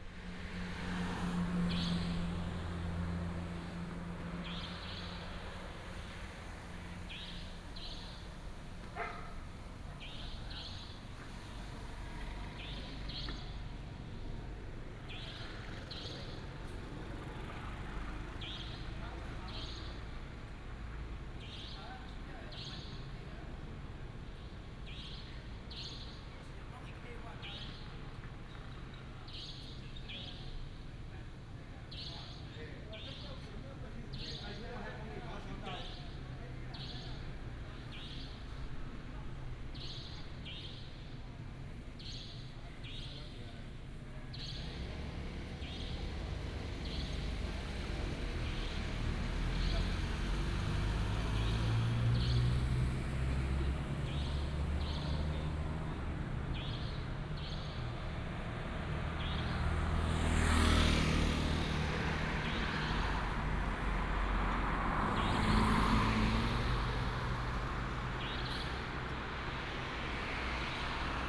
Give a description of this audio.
At the intersection, Bird call, Traffic sound, Dog barking, Binaural recordings, Sony PCM D100+ Soundman OKM II